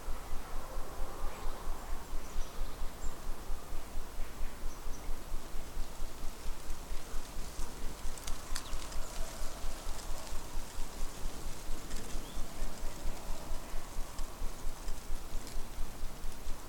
Šlavantai, Lithuania - Rustling leaves, ambience
Gentle ambience, sounds of leaves rustling, bird calls and occasional reverberations from cars passing by on the other side of the lake. Recorded with ZOOM H5.